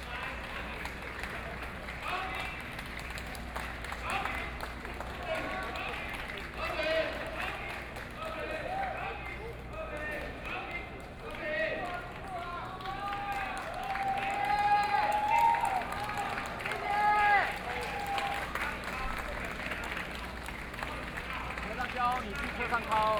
Walking through the site in protest, People and students occupied the Legislature
Binaural recordings
Zhongzheng District, Taipei City, Taiwan, 19 March 2014, 22:23